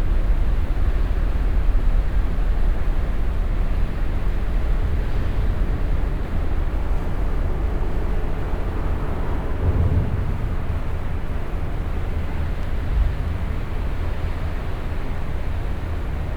Wesel, Deutschland - Wesel, underneath rhine bridge
Underneath the Rhine bridge at Wesel. The sounds of cars crossing the bridge and resonating in the big metall architecture. Some birds chirping. First recorded without wind protection.
soundmap d - social ambiences and topographic field recordings